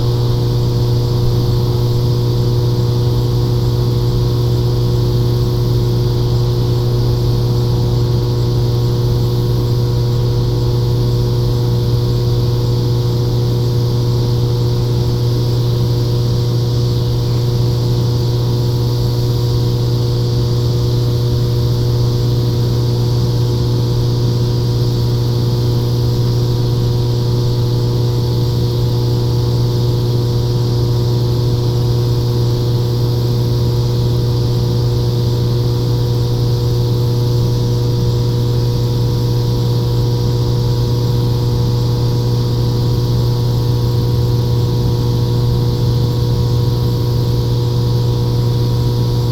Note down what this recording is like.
Hum from sub-station and cicada along bike path. Some EMF crackle and distortion due to overhead power lines.